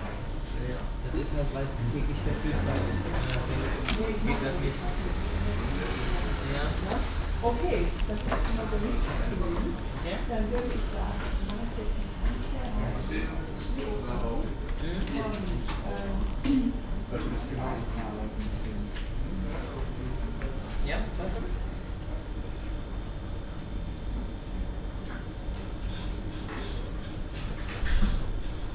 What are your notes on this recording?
bankautomat, kontoauszugdrucker und kundenbetrieb, morgens, soundmap nrw: social ambiences/ listen to the people - in & outdoor nearfield recording